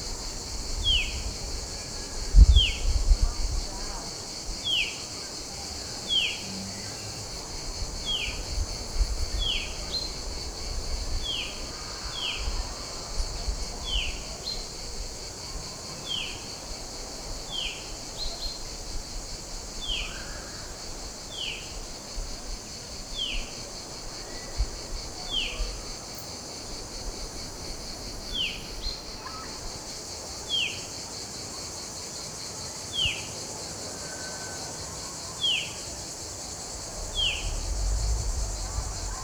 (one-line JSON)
{"title": "Abandoned Soviet sanatorium, Sudak, Crimea, Ukraine - Soviet ruins & new Russian tourist beach cafés, devastated, all", "date": "2015-07-13 12:00:00", "description": "Since 1783, means for Russians ever since, they come to recover on the Crimean seaside. Here you listen into sundried plants, insects and birds occupying this empty rotting away complex of beautiful sanatoria buildings. Then i take the zoom-recorder with me to bring it slowly closer to the beach, which is also left behind by tourism, only a few pro-annexion holiday-winners from russia promenade, the music is still playing for the memories of past summers full of consumption and joy.", "latitude": "44.84", "longitude": "34.98", "altitude": "16", "timezone": "Europe/Simferopol"}